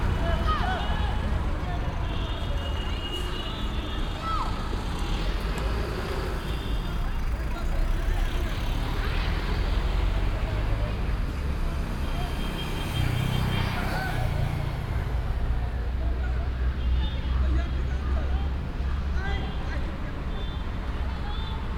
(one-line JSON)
{"title": "bangalor, cricket field", "date": "2011-02-14 22:48:00", "description": "sunday afternoon, near a sandy field that is used for cricket play. about 5 amateur teams playing parallel the national indian game.\ninternational city scapes - social ambiences and topographic field recordings", "latitude": "12.91", "longitude": "77.59", "altitude": "919", "timezone": "Asia/Kolkata"}